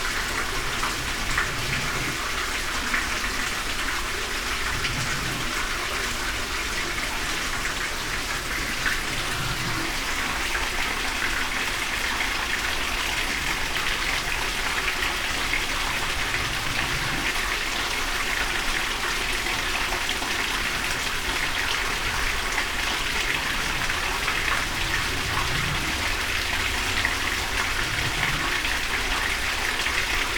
water well, Studenci, Maribor - high waters, breathing murmur
rain through summer and early autumn, everything swollen, as waters are high breathing of this concrete well is audible